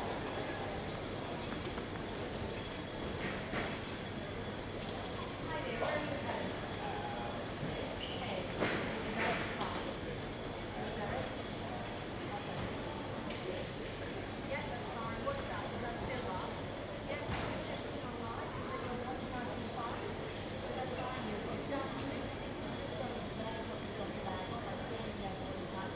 Terminal, Perth Airport WA, Australia - Cafe Ambience, Arrivals, Terminal 4, Perth Airport, Western Australia.
Sitting at a café between Qantas bag check-in and arrivals, having a scotch. Terminal 4 handles most domestic flight arrivals.
20 November 2017, 21:00